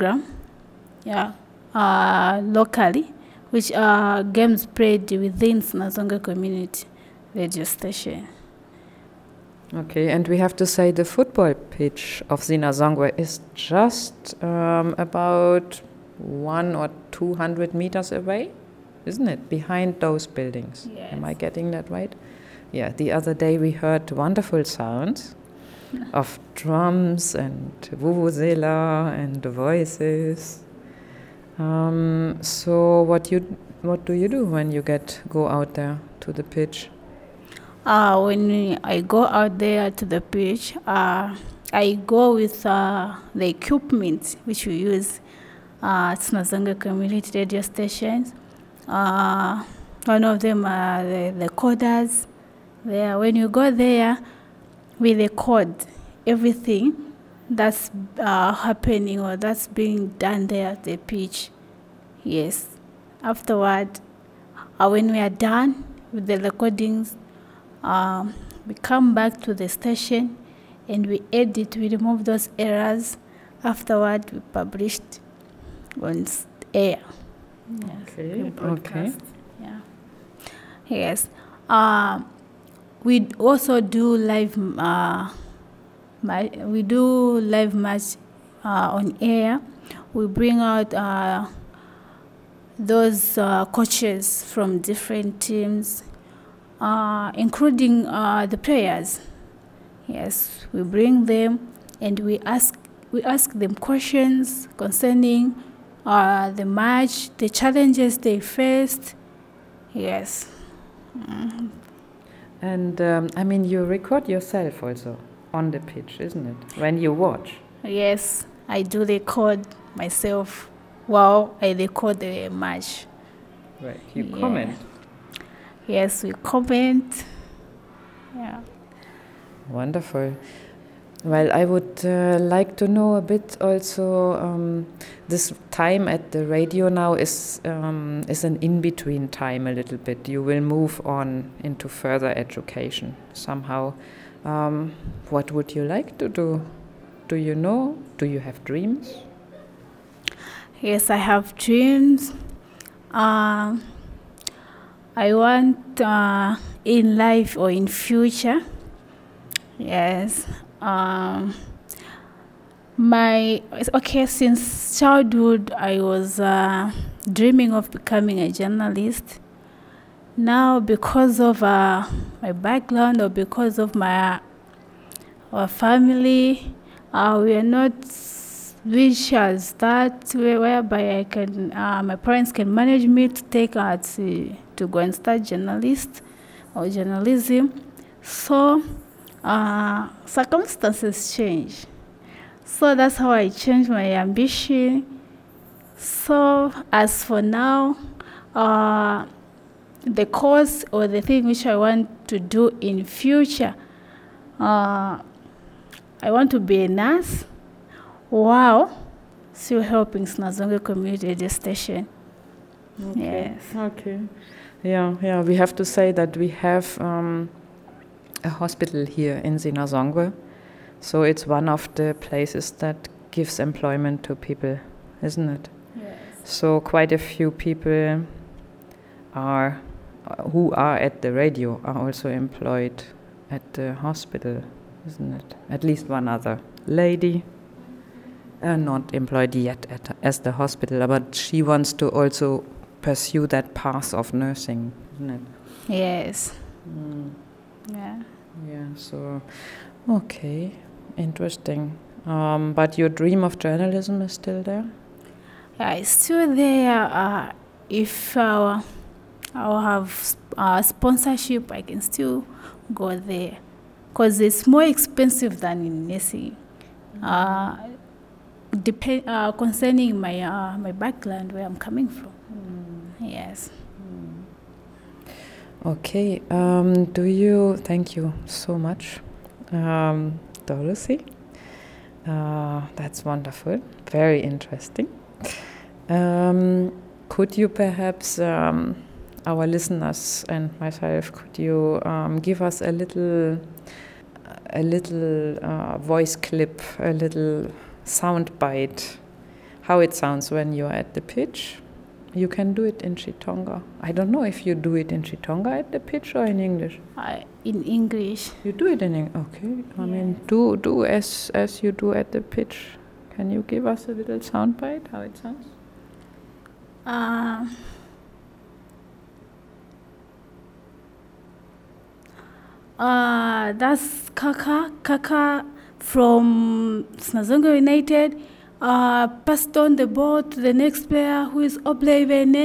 IT teaching room, Sinazongwe Primary School, Sinazongwe, Zambia - Nosiku living and adjusting her dreams...
Nosiku Dorothy Mundia was one of only very few young women who came to join our ZongweFM training and broadcasts; and she was the only one to stay long enough for us to achieve quite some work together… in this excerpt from our first recording together, she tells us about the sports programme she does with recordings from the very nearby local pitch…
We recorded the interview in the IT class room next to the Zongwe FM studio after one of my first training sessions with Zongwe team in June 2018. The radio transmitter is housed in this room; that’s the white noise in the background.